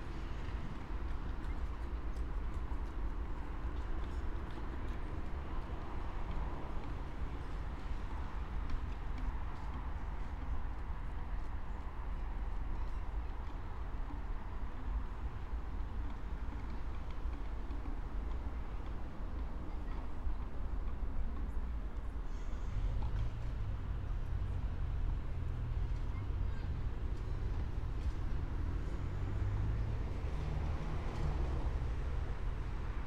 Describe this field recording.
12:45 Brno, Lužánky - late summer afternoon, park ambience, (remote microphone: AOM5024HDR | RasPi2 /w IQAudio Codec+)